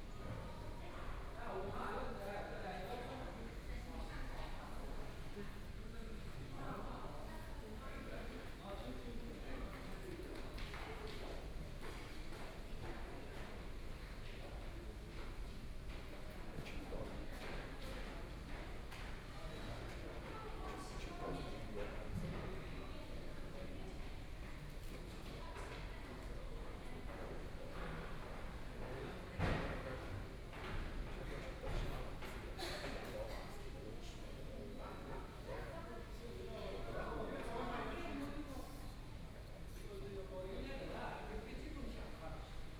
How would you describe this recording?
In the hotel lobby, Binaural recording, Zoom H6+ Soundman OKM II